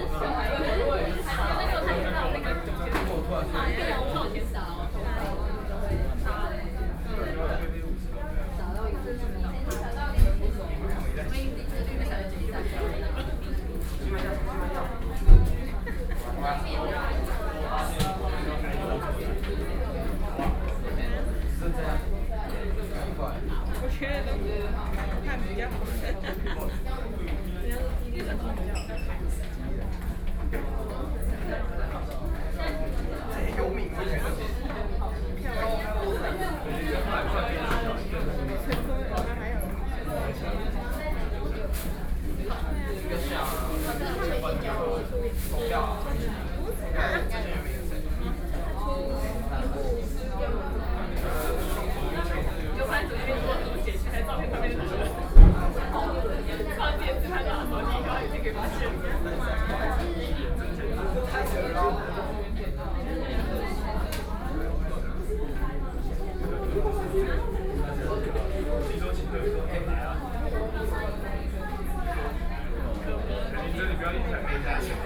McDonald's, Chiayi - High school students in dialogue
at McDonald's, High school students in dialogue, Sony PCM D50 + Soundman OKM II